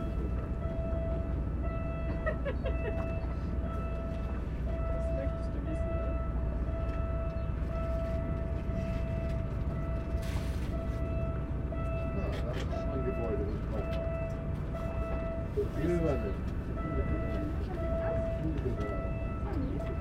Hamburg, Deutschland - Pontoon and tourist boat
On the pontoon. Landungsbrücken near the Elbphilharmonie. Some tourist boats berthing. At the backyard, sounds of the Hamburg harbor.
Hamburg, Germany, 19 April 2019